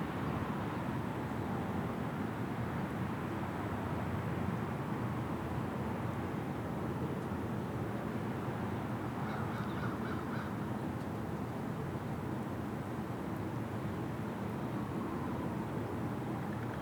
Energy Park - Energy Park 2022-03-16 1536CDT
Recording next to railroad tracks in the Energy Park area of St Paul. Unfortunately no trains passed when making this recording.
Recorded using Zoom H5
Minnesota, United States, 16 March 2022